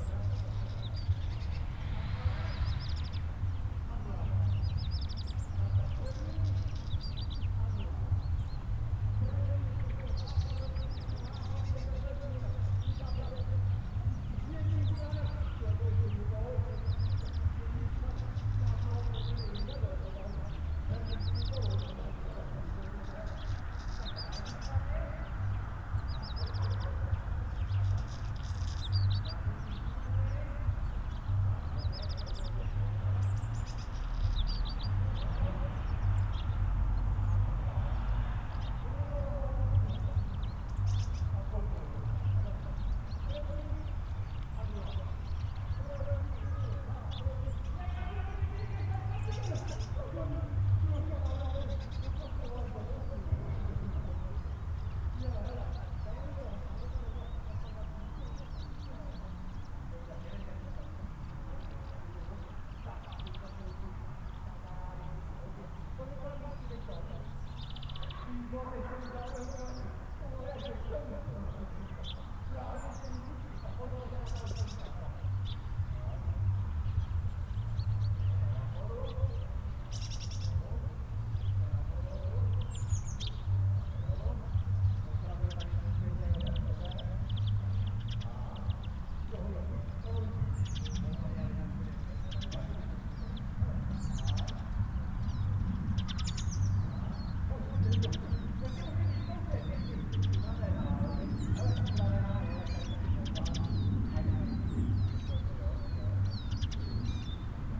{
  "title": "Mariánské Radčice, Tschechische Republik - Village fair from outside the village",
  "date": "2015-09-12 10:45:00",
  "description": "Village fair from outside the village.",
  "latitude": "50.57",
  "longitude": "13.67",
  "altitude": "254",
  "timezone": "Europe/Prague"
}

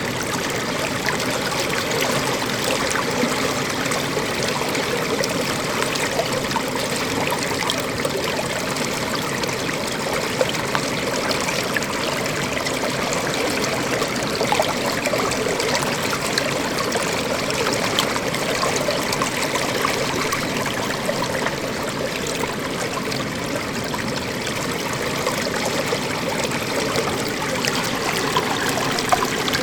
{
  "title": "Altay. Russia - Altay. Ursul river",
  "date": "2014-08-23 13:40:00",
  "description": "We were traveling in the Altai mountains (Russia). The sounds of the local rivers is very great! Now there is something to remember )\nRecored with a Zoom H2.",
  "latitude": "50.82",
  "longitude": "85.93",
  "altitude": "887",
  "timezone": "Asia/Omsk"
}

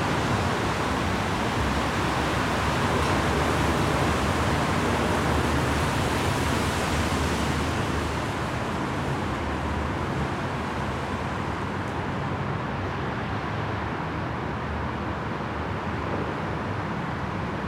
{
  "title": "Rathauspassage parking garage lost corner",
  "date": "2010-02-02 12:47:00",
  "description": "resonances recorded in an empty corner of the parking garage, Aporee workshop",
  "latitude": "52.52",
  "longitude": "13.41",
  "altitude": "41",
  "timezone": "Europe/Tallinn"
}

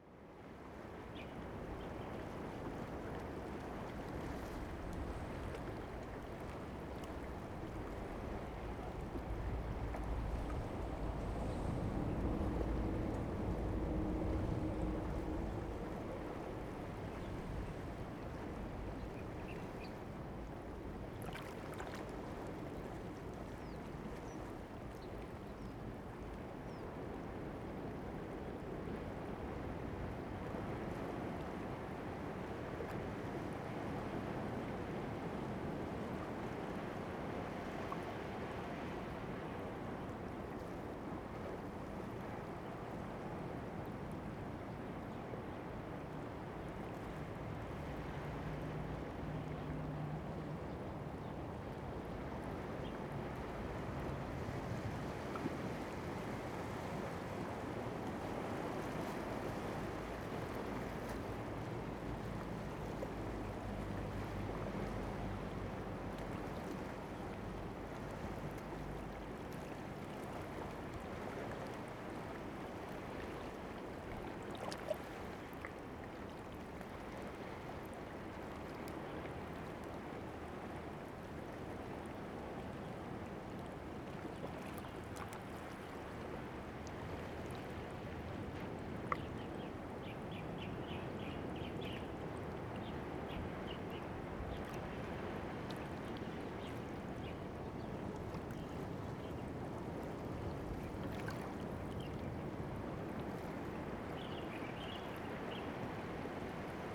{
  "title": "富山護漁區, Beinan Township - Tide",
  "date": "2014-09-08 08:02:00",
  "description": "Tide, Birds singing, Sound of the waves, Traffic Sound\nZoom H2n MS +XY",
  "latitude": "22.84",
  "longitude": "121.19",
  "altitude": "6",
  "timezone": "Asia/Taipei"
}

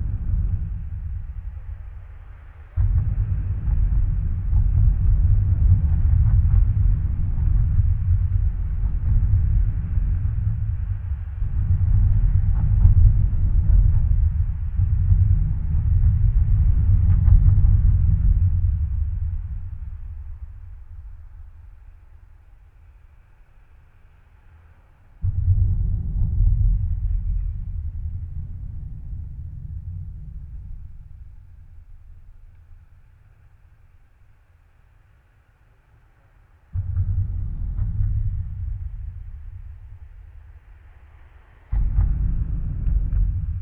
{
  "title": "Binckhorstlaan, Den Haag - Carbridge & Birds",
  "date": "2012-11-29 18:42:00",
  "description": "Instead of recording underwater, I used the hydrophones as contact microphones and placed them at the beginning of the cartridge located at the Binckhorstlaan.\nRecorded using two hydrophones and a Zoom H4.",
  "latitude": "52.06",
  "longitude": "4.34",
  "altitude": "2",
  "timezone": "Europe/Amsterdam"
}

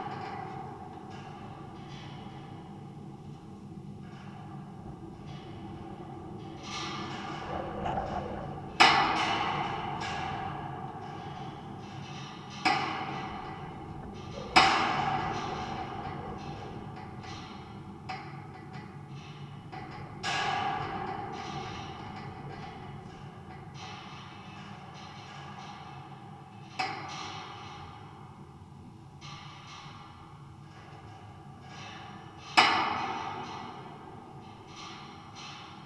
{
  "title": "Lithuania, Utena, a fence",
  "date": "2012-10-05 17:40:00",
  "description": "metallic fence...contact microphone recording",
  "latitude": "55.51",
  "longitude": "25.59",
  "altitude": "117",
  "timezone": "Europe/Vilnius"
}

